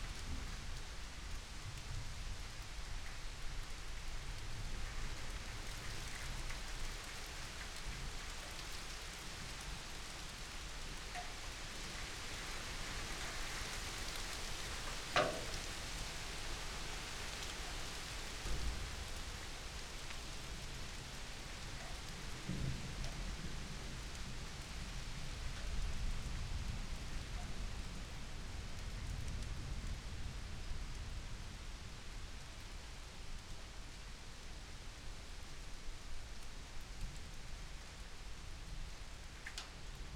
Melle, Bakumerst. Germany - Thunderstorm, Rain and Birds
Recorded with Zoom H6 earworm 3 microphone and dummyhead, use headphones
15 June 2017, 18:00